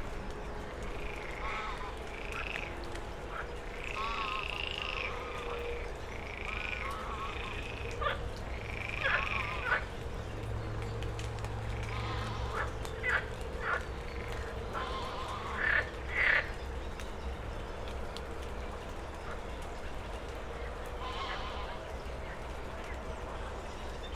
after the rain at Moorlinse pond, frogs and geese, a S-Bahn passing by, distant traffic noise from the Autobahn
(SD702, Audio Technica BP4025)